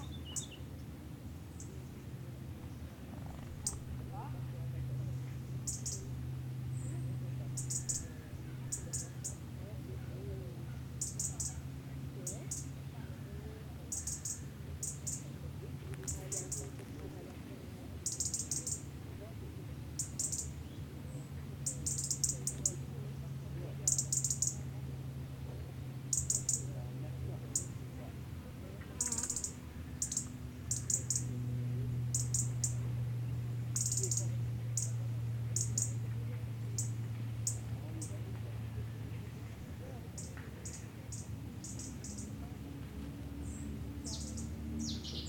Plage de Mémard, Aix-les-Bains, France - Tout près
Couché sur le côté dans l'herbe pour abriter le ZoomH4npro du vent, un rouge-gorge chante tout près et vient se poser à 2mètres échange de regards, il n'est pas craintif, il rejoint son perchoir dans l'arbre et délivre une série de cliquetis. Passants sur le chemin proche, rumeurs de la ville au loin.